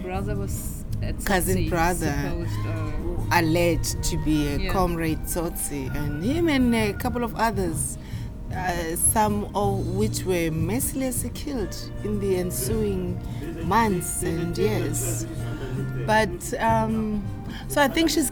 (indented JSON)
{
  "title": "Bat Centre, South Beach, Durban, South Africa - don't say a word...",
  "date": "2009-02-22 14:35:00",
  "description": "Faith is full of stories...\nrecorded during the Durban Sings project",
  "latitude": "-29.86",
  "longitude": "31.03",
  "altitude": "5",
  "timezone": "GMT+1"
}